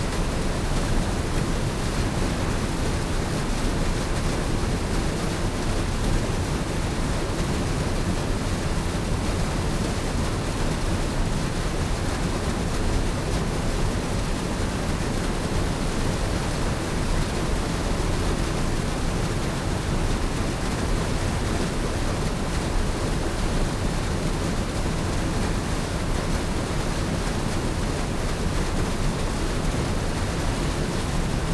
Reading, UK - mill race ...
mill race ... the old mill ... dpa 4060s clipped to bag to zoom h5 ... on the walkway above the sluices ...
England, United Kingdom, 5 November